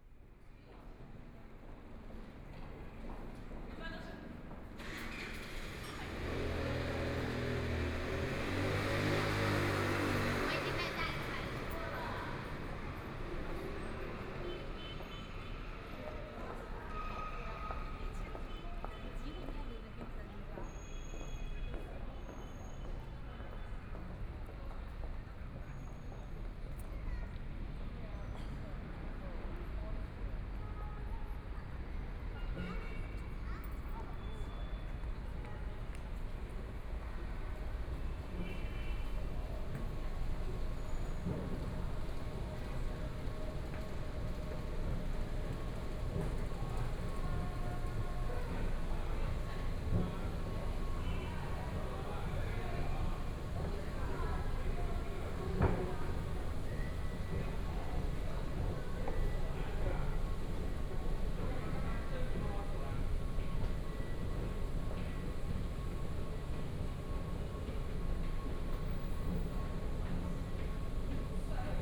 Yangpu District, Shanghai - soundwalk
From the building to the subway station, Went underground platforms, Binaural recording, Zoom H6+ Soundman OKM II
Shanghai, China, 21 November 2013